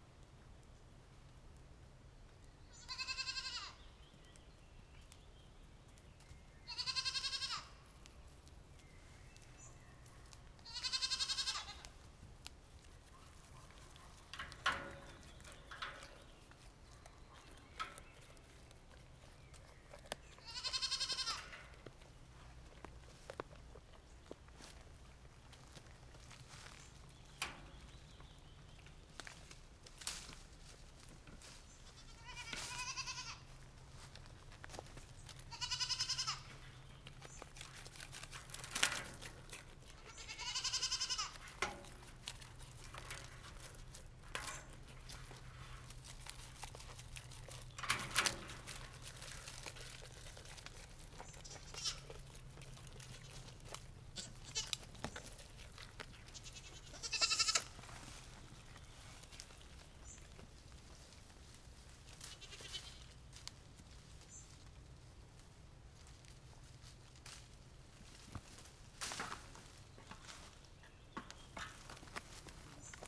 Sasino, at gamekeeper's gate - little goat
three little goats ran up the the gate and started chewing on oak tree leaves.
województwo pomorskie, Polska, 28 June, ~18:00